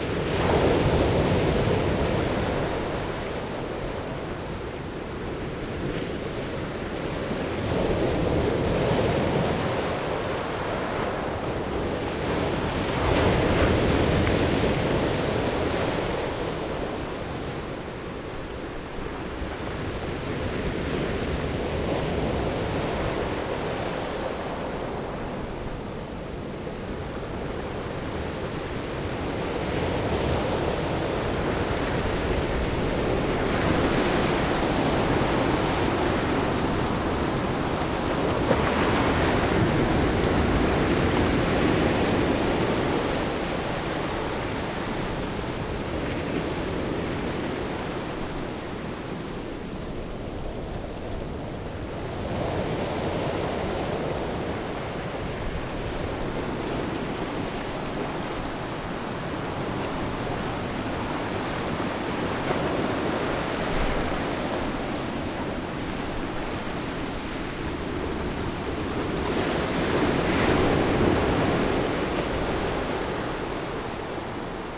sound of the waves
Zoom H6 + Rode NT4
Taitung County, Taiwan, 29 October